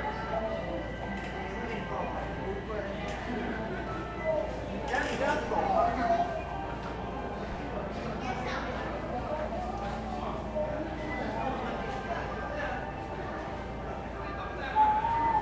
Zuoying District, Kaohsiung - inside the Trains
from Kaohsiung Arena Station to Ecological District Station, Sony ECM-MS907, Sony Hi-MD MZ-RH1
左營區 (Zuoying), 高雄市 (Kaohsiung City), 中華民國